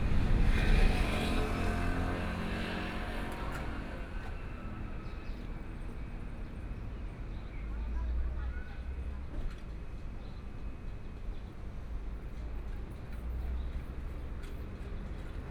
瑞芳區海濱里, New Taipei City - Traffic Sound
Standing on the roadside, Traffic Sound, Very hot weather
Sony PCM D50+ Soundman OKM II
New Taipei City, Taiwan, 21 July 2014, ~12:00